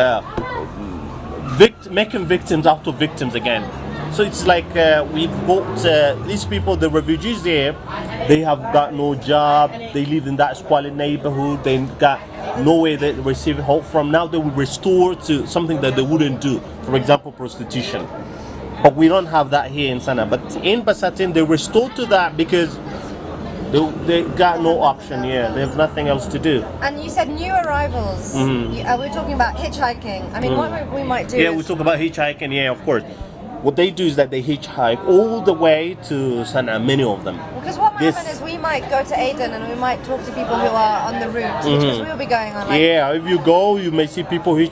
Discret, Sana'a, Yémen - Tanzanian Taxi driver in Sana'a
Tanzanian Taxi driver in Sana'a talk about the situation
Sana'a, Yemen